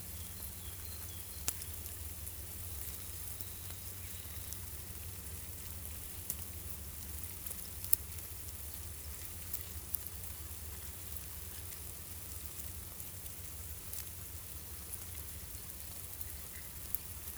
{"title": "Saint-Laurent-du-Pont, France - Ants", "date": "2017-03-30 16:00:00", "description": "A big anthill in the forest. Happy ants are working.", "latitude": "45.39", "longitude": "5.76", "altitude": "1012", "timezone": "Europe/Paris"}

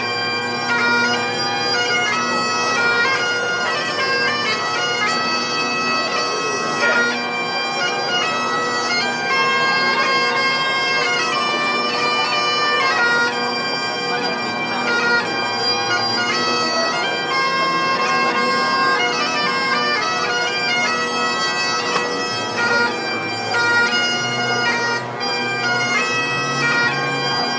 {"title": "Pipes at Market Street", "date": "2010-10-13 15:25:00", "description": "A scottish pipe player", "latitude": "53.48", "longitude": "-2.24", "altitude": "57", "timezone": "Europe/London"}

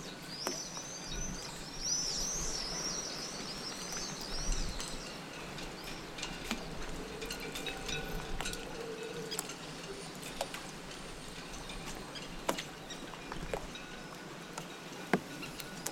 {"title": "Leopld ha-Sheni St, Acre, Israel - Port of Acre", "date": "2018-05-03 09:00:00", "description": "Sea, waves, port, boats, birds", "latitude": "32.92", "longitude": "35.07", "altitude": "3", "timezone": "Asia/Jerusalem"}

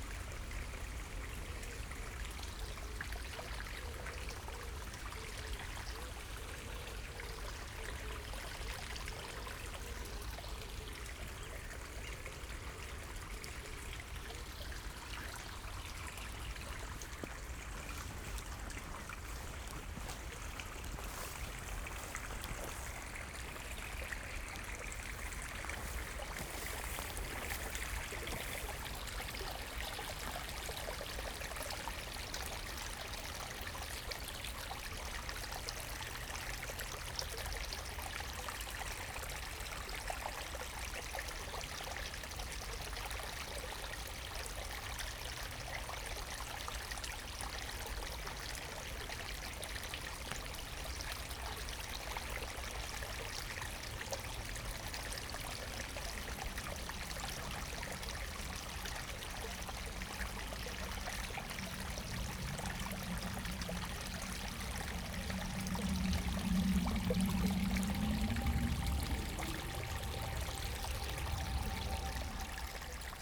{"title": "Rohrbruchpark, Marzahn, Berlin - river Wuhle water flow", "date": "2015-05-23 19:05:00", "description": "water flow, finally audible at this point. the river has collected quite some water during its first kilometers, but also some dirt, since it is used as wastewater disposal for the surrounding areas.\n/SD702, DPA4060)", "latitude": "52.53", "longitude": "13.58", "altitude": "43", "timezone": "Europe/Berlin"}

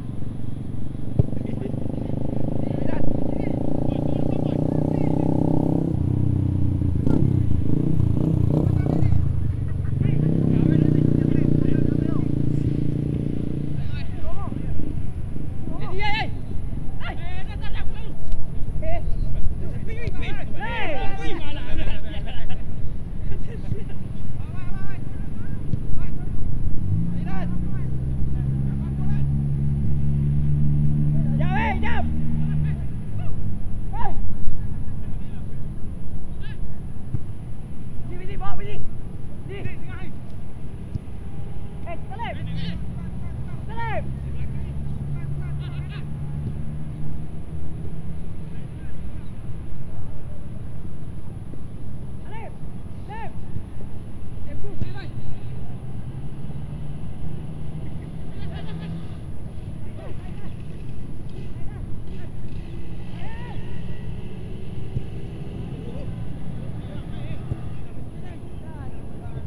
{"title": "Jalan Solok Pantai Peringgit, Melaka, Malaysia - Evening football", "date": "2017-11-12 18:30:00", "description": "It was a breezy Sunday and decided to watch a daily football match set by the players who live nearby. The house is just around and decided to just walk to the field. Also wanted to test the DIY windshield made out from socks. Not suitable for outdoor recordings but the football match is enjoyable to watch.", "latitude": "2.23", "longitude": "102.26", "altitude": "8", "timezone": "Asia/Kuala_Lumpur"}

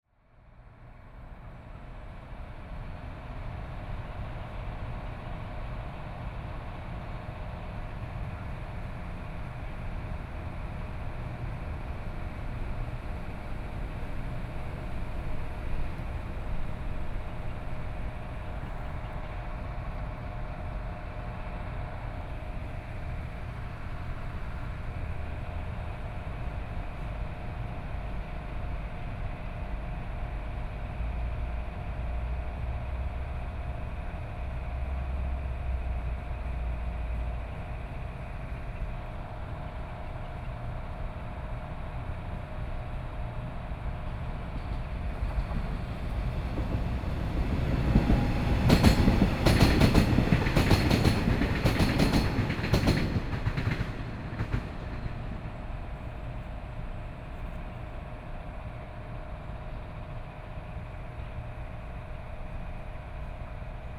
{"title": "羅東林業文化園區, Yilan County - in the Park", "date": "2014-07-28 09:21:00", "description": "In the park, Air conditioning noise, Trains traveling through, Traffic Sound", "latitude": "24.68", "longitude": "121.77", "altitude": "10", "timezone": "Asia/Taipei"}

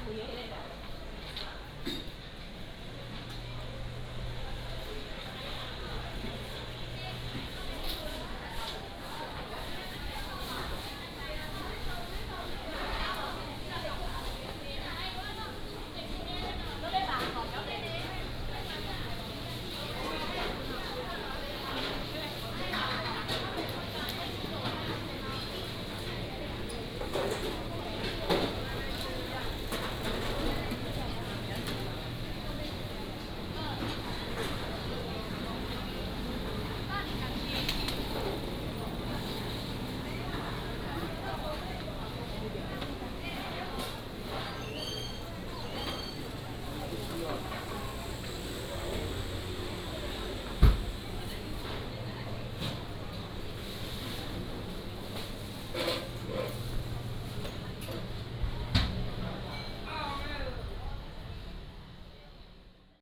{"title": "Market, Zhongle Rd., Minxiong Township - Walking in the market", "date": "2017-01-25 12:58:00", "description": "Walking in the market, Traffic sound", "latitude": "23.56", "longitude": "120.43", "altitude": "31", "timezone": "GMT+1"}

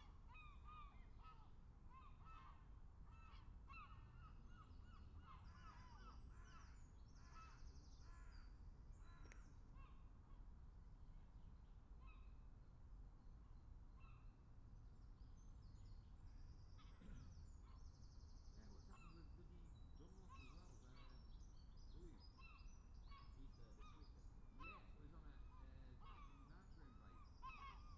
{"title": "Scarborough, UK - motorcycle road racing 2017 ... newcomers ...", "date": "2017-04-22 09:14:00", "description": "New comers warmup ... Bob Smith Spring Cup ... Olivers Mount ... Scarborough ... 125 ... 250 ... 400 ... 600 ... 1000cc bikes and sidecars ... plenty of background sounds before the bikes arrive ... open lavalier mics clipped to sandwich box ... voices ... bird calls ...", "latitude": "54.27", "longitude": "-0.41", "altitude": "147", "timezone": "Europe/London"}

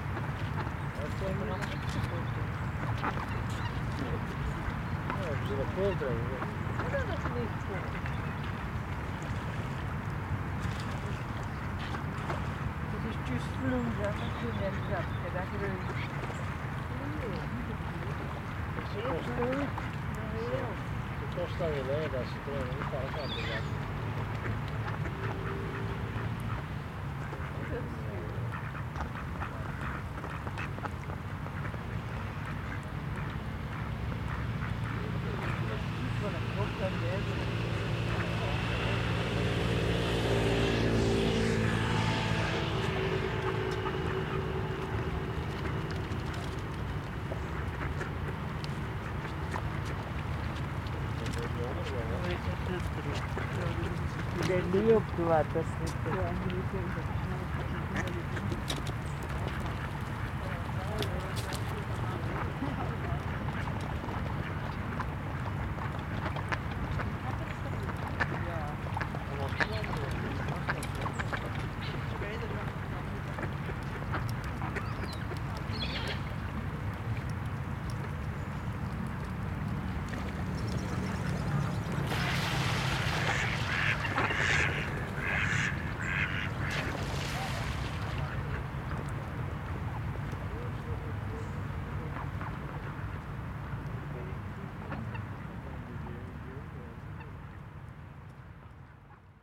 {"title": "Gouverneur Verwilghensingel, Hasselt, Belgique - Ducks and people", "date": "2021-10-23 16:20:00", "description": "Ducks, people passing by near the pond. Dista, nt drone from the cars nearby.\nTech Note : Sony PCM-D100 internal microphones, wide position.", "latitude": "50.93", "longitude": "5.35", "altitude": "31", "timezone": "Europe/Brussels"}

